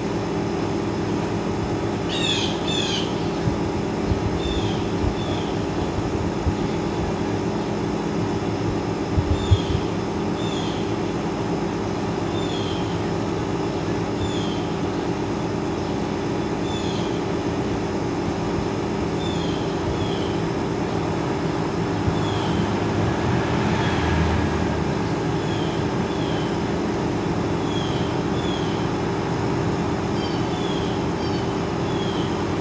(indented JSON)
{
  "title": "Woodbine Ave, East York, ON, Canada - Blue Jays and a/c.",
  "date": "2018-07-29 08:30:00",
  "description": "Early on a Sunday morning. Blue jay calls with an unfortunate amount of noise from a nearby air conditioning unit.",
  "latitude": "43.69",
  "longitude": "-79.31",
  "altitude": "132",
  "timezone": "America/Toronto"
}